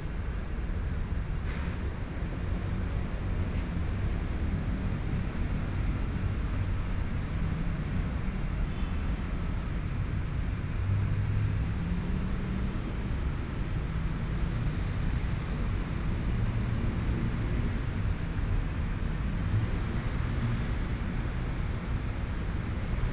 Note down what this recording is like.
Train traveling through, Sony PCM D50 + Soundman OKM II